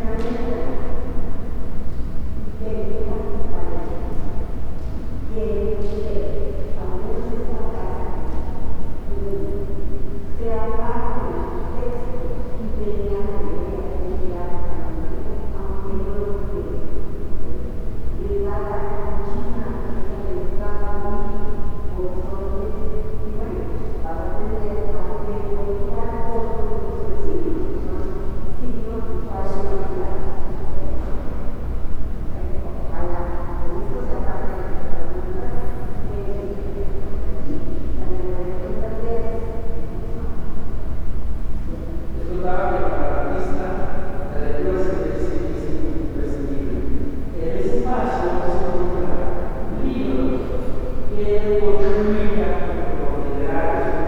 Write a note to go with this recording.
An everyday day in the Luis García Guerrero room, of the Museum of Art and History of Guanajuato. People are heard walking past the current exhibit and commenting on it. Also one of the guides gives information to a group of visitors. I made this recording on june 3rd, 2022, at 1:27 p.m. I used a Tascam DR-05X with its built-in microphones and a Tascam WS-11 windshield. Original Recording: Type: Stereo, Un día cotidiano en la sala Luis García Guerrero, del Museo de Arte e Historia de Guanajuato. Se escucha la gente pasando por la exposición actual y comentando al respecto. También a uno de los guías dando información a un grupo de visitantes. Esta grabación la hice el 3 de junio de 2022 a las 13:27 horas.